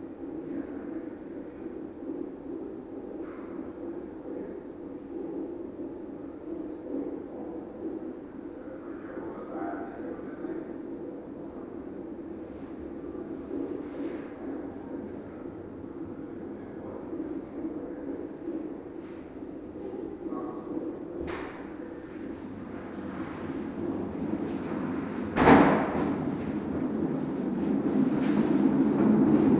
Lithuania, Utena, maternity ward
in the corridor of maternity ward in local hospital. you can hear the cyclic sound of the infant (in the womb of the mother) through electronic stethoscope...just three days ago Ive became a father:)